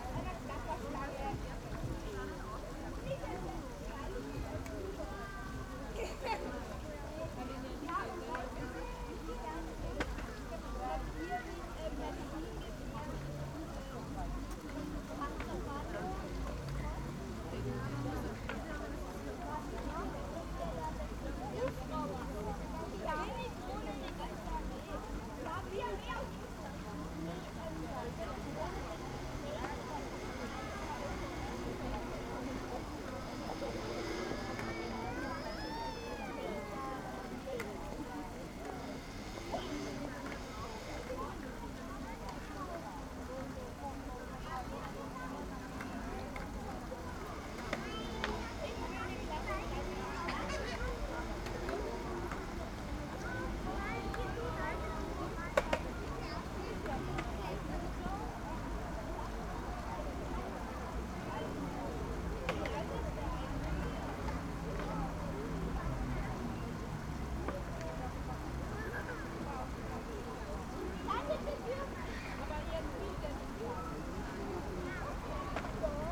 playground ambience and fountain at Hessenpark, Linz.
(Sony PCM)